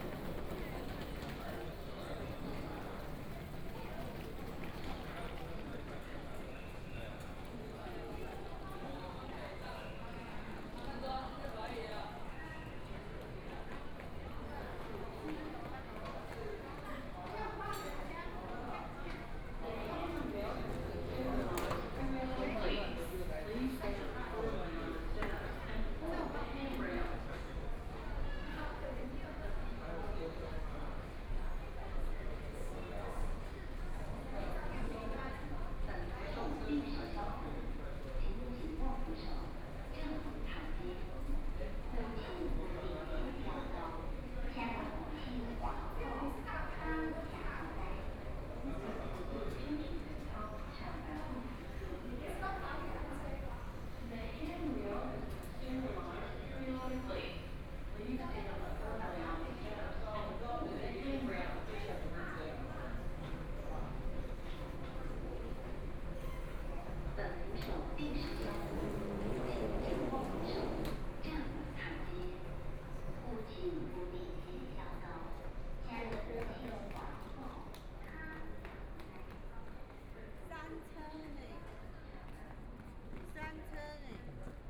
Chiayi Station, Taiwan High Speed Rail - At the station
At the station, Zoom H4n+ Soundman OKM II